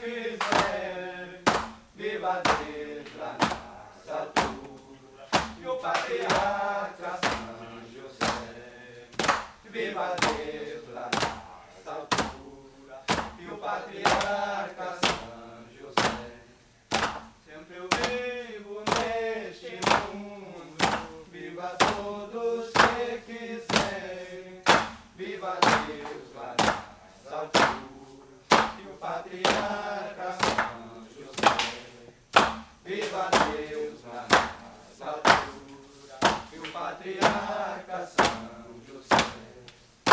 Mapia- Amazonas, Brazilië - batisao: hammering the vine
batisao: hammering the vine
Região Norte, Brasil, 9 July 1996, 08:04